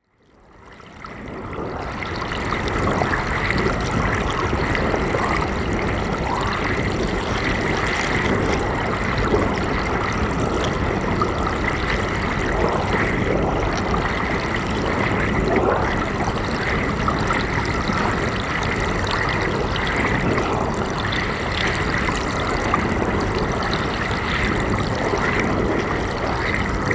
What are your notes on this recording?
It was at this spot that the notorious self-styled Witchfinder General, Matthew Hopkins, probably in 1645, subjected women to ‘swimming tests’ in which they were thrown into the water tied to a chair to see if they would float or sink, floating confirming them as witches (as fresh flowing ‘baptismal’ water would abhor a witch), sinking (with probably drowning) confirming their innocence.